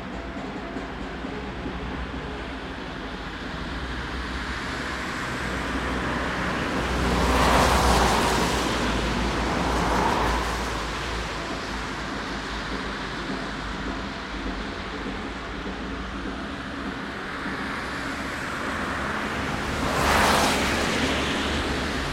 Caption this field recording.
Recorded near a traffic bridge over a railway. In the distance a makeshift "band" with brass and drums plays some tunes to get money from people living in apartment blocks. I like how that sound disappears in the equally rhythmic sound of cars passing by. Recorded with Superlux S502 Stereo ORTF mic and a Zoom F8 recorder.